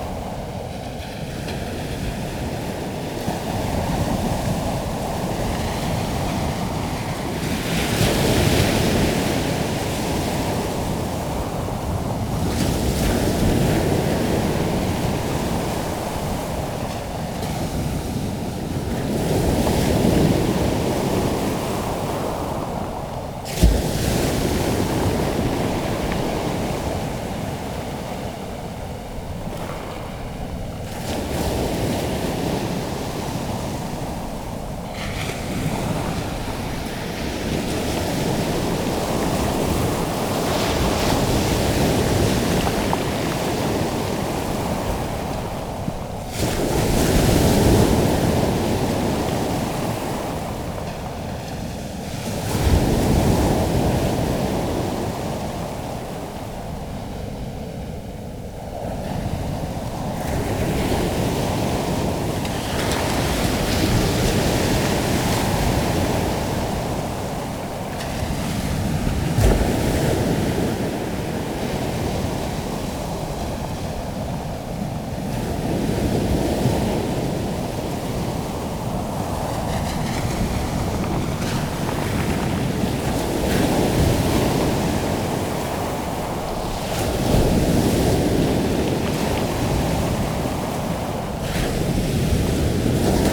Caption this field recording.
High tide ... rising sun ... Amble ... open lavalier mics on T bar clipped to mini tripod ... sat in the shingle ... watching the sun come up ... and a high tide roost of sanderlings ...